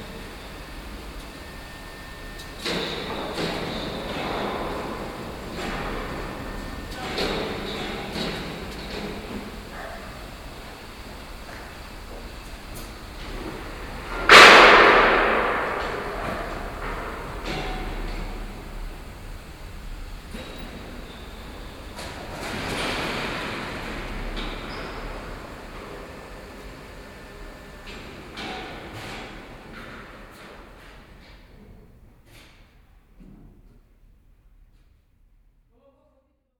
hardware wholesale U Pergamonky
one of the last wholesale iron factory hall in Prague.